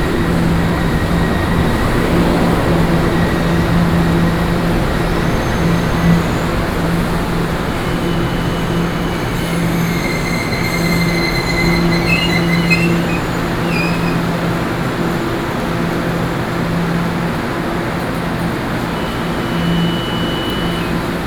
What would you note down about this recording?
in the station platform, Sony PCM D50+ Soundman OKM II